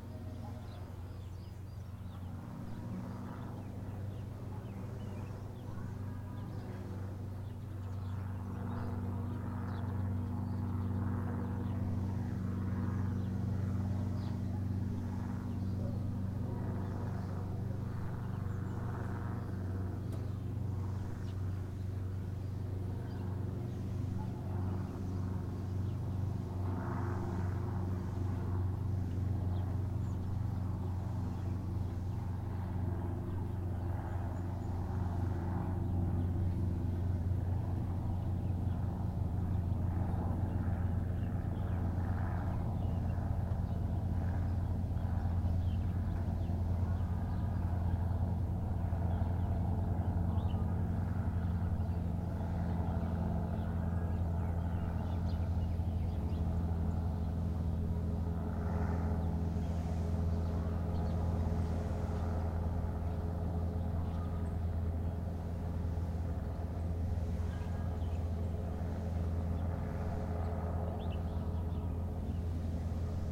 Rue Leconte De Lisle, Réunion - 20180205 0953-1003
20180205_0953-1003 CILAOS concert d'hélicoptère, 6mn30 après le début voici le son de l'hélicoptère "le plus silencieux du monde"!!!
Ces hélicoptères ont du être modifié: ils font bien plus de bruit que devraient faire des EC130B4 normaux, ou bien les pilotes conduisent comme des manches: c'est une énorme nuisance ici bas qui met en danger la flore et la faune.
5 February 2018